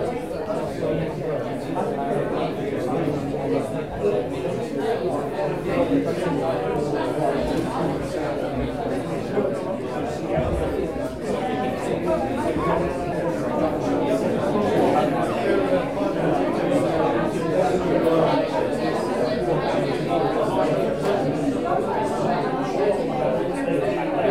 Amesbury, Salisbury, UK - 018 Public consultation